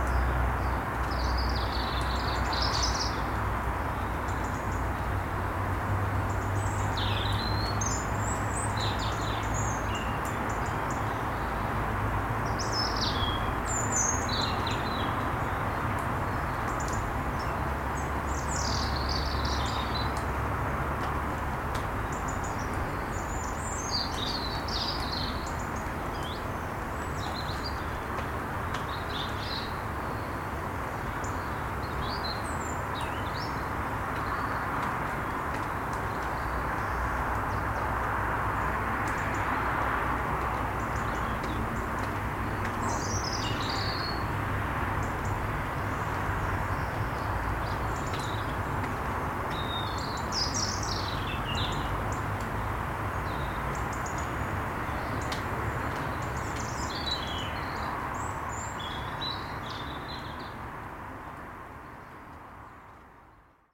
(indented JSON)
{
  "title": "Karatza, Papagos, Greece - birds in the morining after a rainy day",
  "date": "2021-10-15 06:48:00",
  "description": "backyard of house, looking to Ymittos mountain and Attiki highway, recorded with Zoom h4n.",
  "latitude": "37.98",
  "longitude": "23.80",
  "altitude": "265",
  "timezone": "Europe/Athens"
}